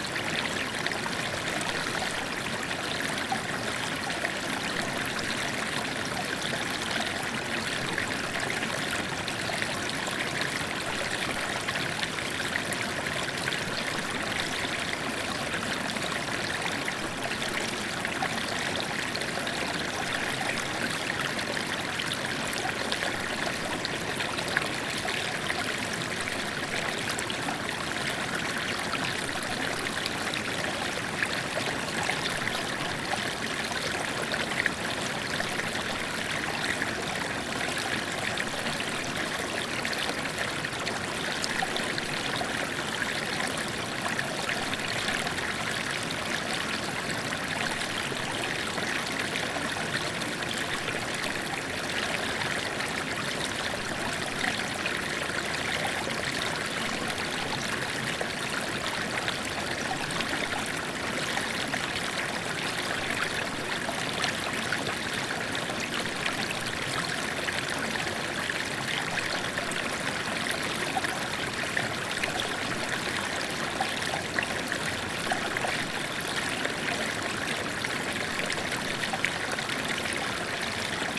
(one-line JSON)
{"title": "Rte de Lovettaz, Saint-Jean-d'Arvey, France - La Doriaz", "date": "2014-03-14 16:00:00", "description": "A l'écoute de ce petit torrent de montagne qu'est la Doriaz .", "latitude": "45.60", "longitude": "5.98", "altitude": "589", "timezone": "Europe/Paris"}